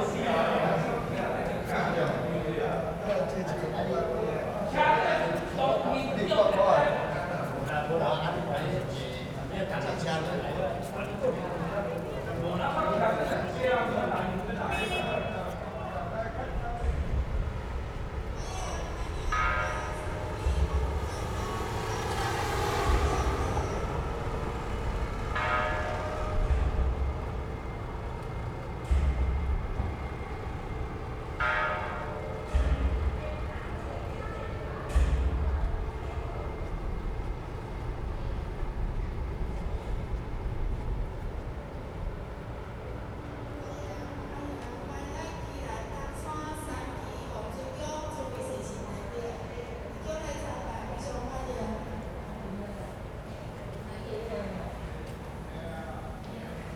東興宮, 新北市貢寮區福隆里 - In the temple
In the temple
Zoom H4n+ Rode NT4